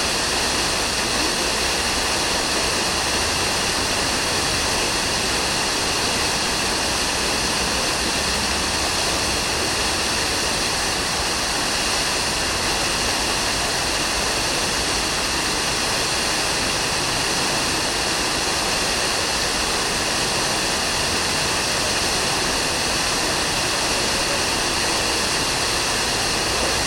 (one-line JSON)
{"title": "Ogród Saski, Marszałkowska/Królewska, Warszawa, Pologne - Fontanna Wielka w Park Ogród Saski", "date": "2013-08-16 12:13:00", "description": "Fontanna Wielka w Park Ogrod Saski, Warszawa", "latitude": "52.24", "longitude": "21.01", "altitude": "113", "timezone": "Europe/Warsaw"}